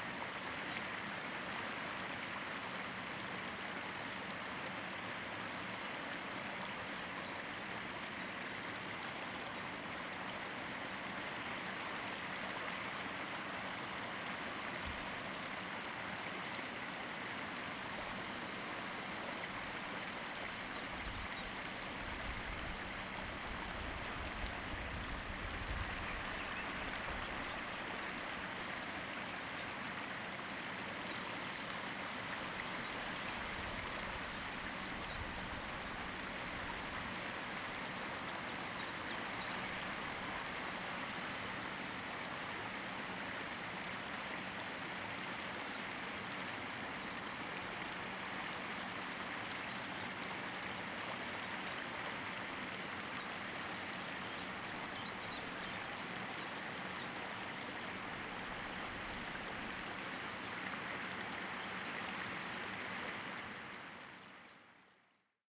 ERM fieldwork -mine water basin
water basin pumped from an oil shale mine 70+ meters below